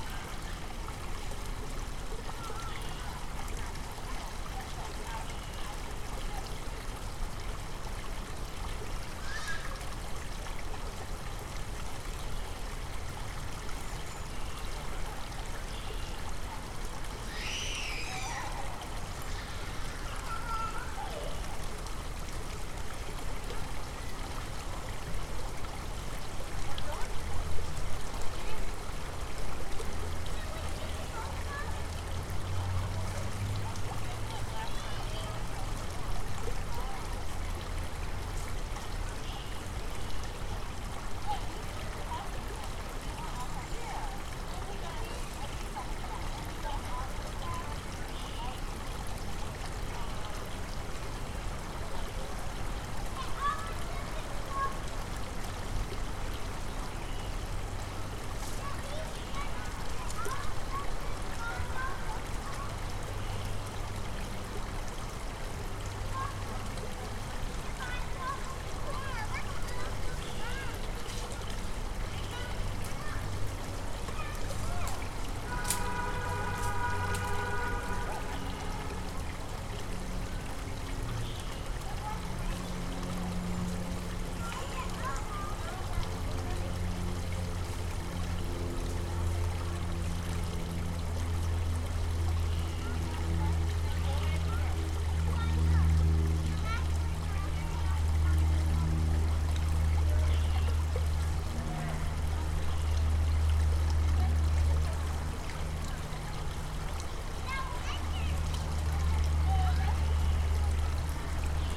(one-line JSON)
{"title": "Peachtree Dunwoody Rd, Atlanta, GA, USA - Little Nancy Creek", "date": "2021-02-21 16:30:00", "description": "A recording made along Little Nancy Creek. The trickling of water is the predominant sound, but children in the background are still quite audible. There are birds and other environmental sounds interspersed throughout the recording. A dried leaf can be heard rustling in close proximity to the left microphone at the end of the recording. This recording was made using the \"tree ears\" strategy, whereby the microphones were mounted on each side of a medium-sized tree. The result is a large stereo separation.\n[Tascam Dr-100mkiii & Primo EM272 omni mics)", "latitude": "33.86", "longitude": "-84.36", "altitude": "277", "timezone": "America/New_York"}